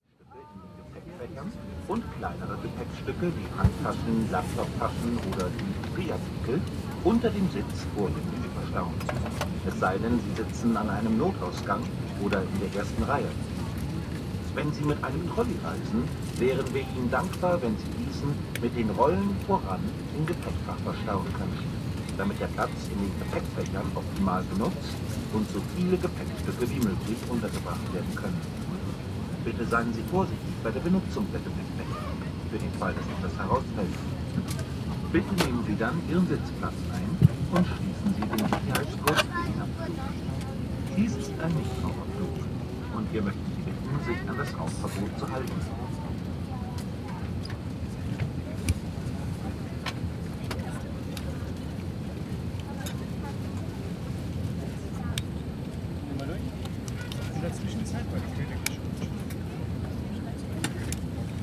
EuroAirport Basel-Mulhouse-Freiburg - boarding announcements
passengers boarding the plane, taking seats, putting their seat-belts on, putting away their carry on, quieting down children, flight announcements.